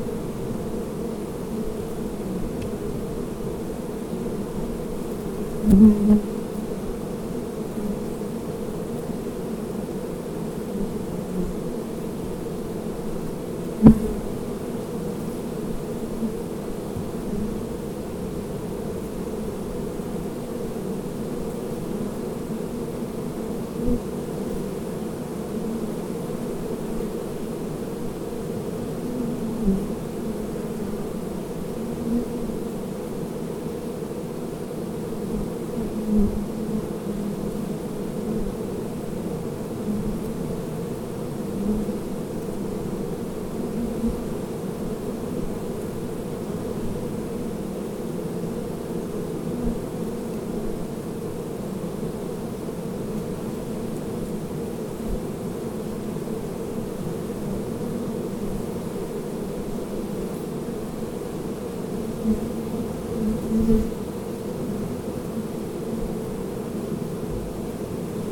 Rakova kotlina pri Rakeku, Rakov Škocjan, Słowenia - bees
Bees/Vajkard/International Workshop of Art and Design/Zoom h4n
22 July 2013, 12:02